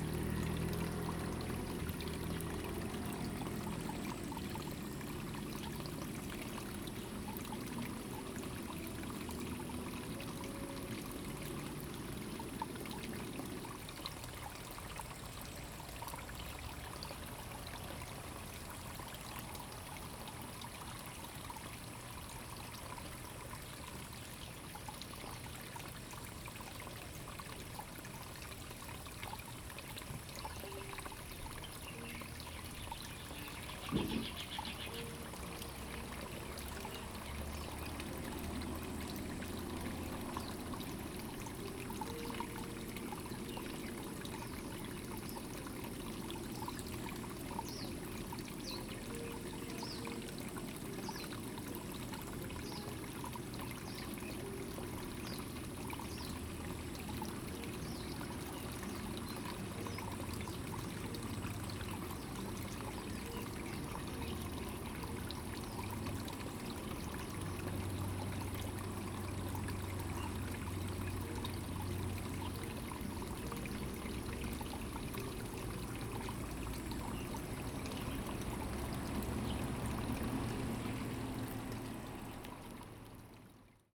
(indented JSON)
{
  "title": "Lane TaoMi, Puli Township - The sound of water",
  "date": "2015-06-10 12:20:00",
  "description": "The sound of water, Bird calls\nZoom H2n MS+XY",
  "latitude": "23.94",
  "longitude": "120.93",
  "altitude": "471",
  "timezone": "Asia/Taipei"
}